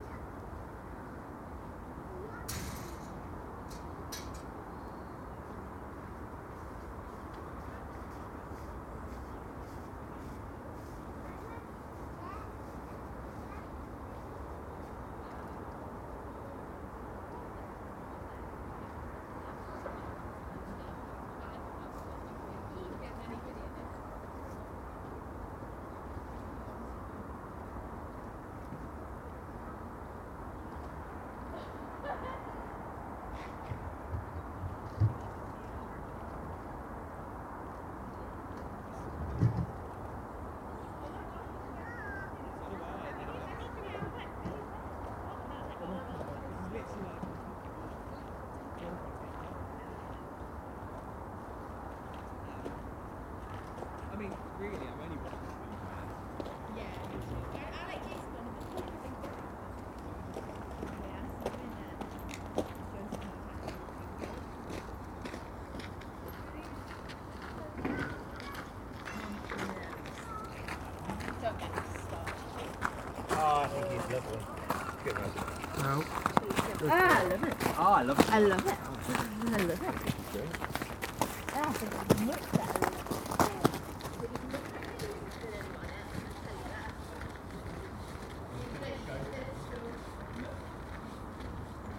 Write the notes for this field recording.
The Drive Moor Crescent Moor Road South Rectory Road, The stillness of winter allotments, cold, wet, shades of brown, Passer-by chatter